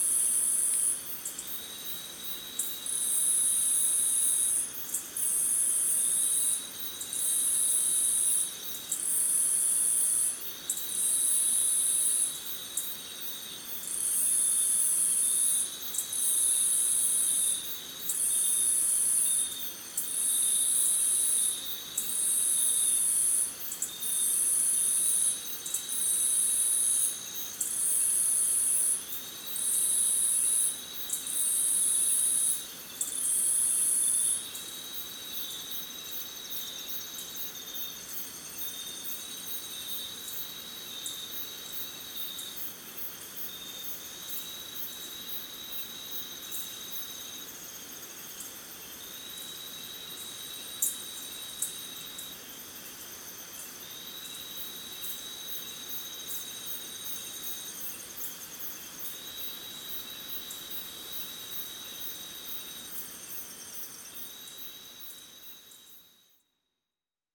Iracambi - moonlight
recorded at Iracambi, an NGO dedicated to preserve and protect the Atlantic Forest
Muriaé - MG, Brazil, 28 July 2017